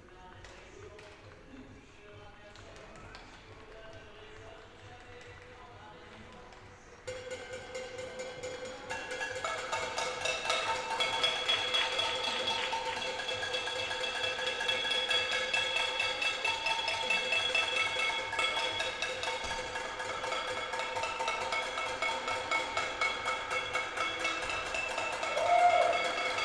Rue Sleidan, Strasbourg, Frankreich - applause for the rescuers, doctors, nurses and others who care for those in need during the corona pandemic